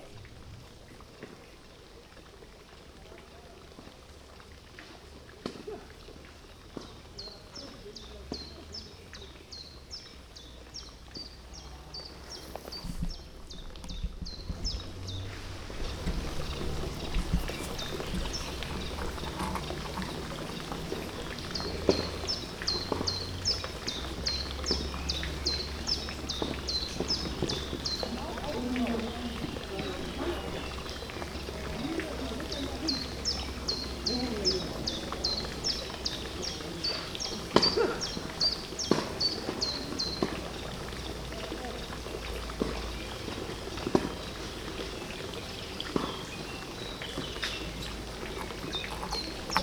Ottilienquelle, Paderborn, Deutschland - Ottilienquelle ueber Wasser
a fountain
of reciprocity
back and forth
appreciating
every offer of yours
never
complaining
about
one of your moves
or moods
a place for swimming
out in the open
sky